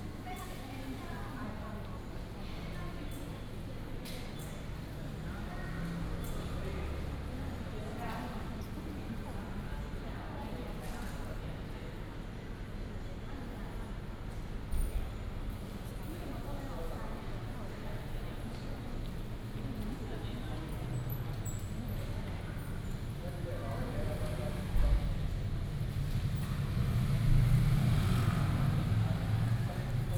Houli Station, Taichung City - In the station hall
In the station hall
Taichung City, Taiwan